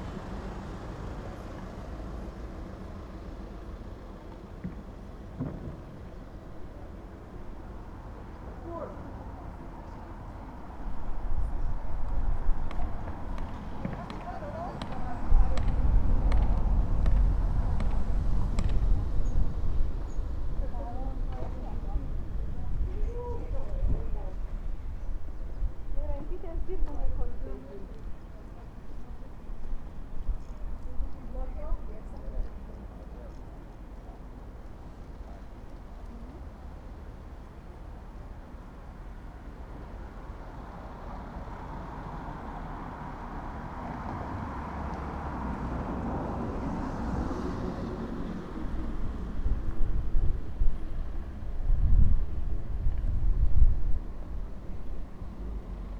Lithuania, Vilnius, soundscape at the bastion
natural musique concrete piece: a mid of a day, passengers, machines, workers...
Vilnius district municipality, Lithuania, 12 September 2012, 12:45